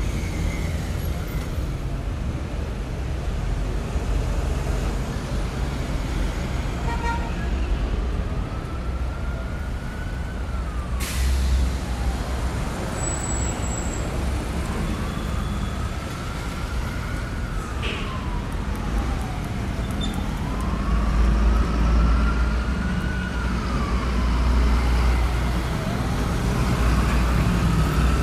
This is the only gas station that can be found in the San Miguel neighborhood, it is an open and comfortable space for the movement of cars. This gas station is located right on Highway 30, therefore, the fundamental sound found is loud traffic, on this highway all kinds of vehicles pass, especially those with heavy loads. The most recognizable sound signals of the place are the passage of ambulances, the passage of motorcycles and other vehicles. A lot of people gather in this space because there is a bus stop, but they cannot be heard because the sound emanating from the cars is louder. As a sound signal we find the noise that the pavement makes when it shakes when quite heavy vehicles pass, and also the sound of the metal covers of the aqueduct and the pipes that are under the gas station.